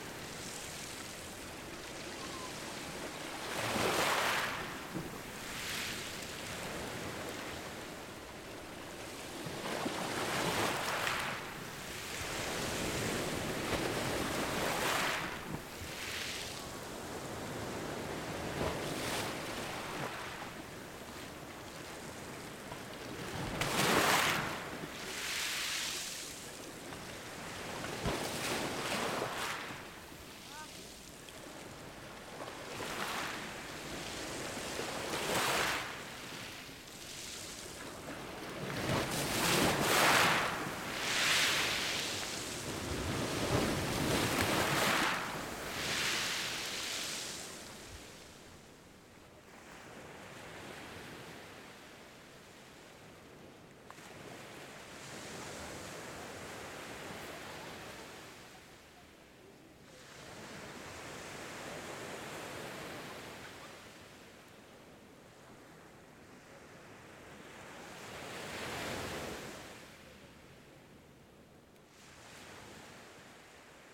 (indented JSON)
{
  "title": "Six-Fours-les-Plages, France - Ile des Embiez",
  "date": "2019-05-30 15:10:00",
  "description": "Ile des Embiez - plage\nambiance\nZOOM H6",
  "latitude": "43.07",
  "longitude": "5.78",
  "altitude": "17",
  "timezone": "Europe/Paris"
}